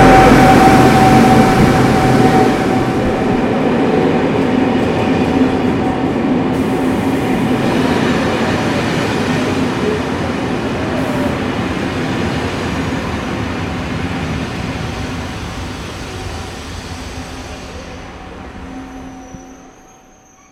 Gare d'Aix les Bains-Le Revard, Pl. de la Gare, Aix-les-Bains, France - Gare Aix-les-bains
Sur le quai de la gare d'Aix-les-bains arrivée du TER .